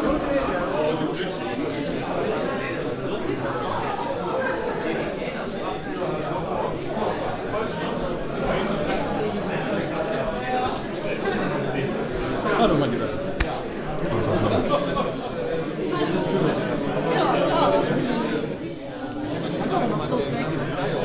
hosingen, nature park house, exhibition
Inside the nature park house at the opening of the permanent nature park exhibition. The sound of the exhibition guests conversation.
Im Naturparkhaus zur Eröffnung der Naturprak Dauerausstellung. Der Klang der Unterhaltungen der Ausstellungsbesucher.
maison du parc - expo